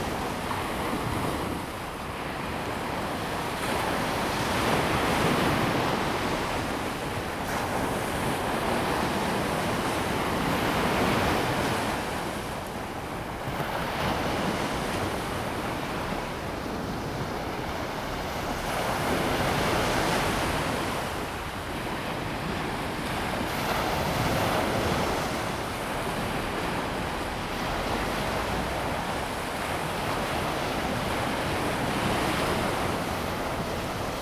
Tamsui District, New Taipei City - On the beach
On the beach, Sound of the waves
Zoom H2n MS+XY
New Taipei City, Taiwan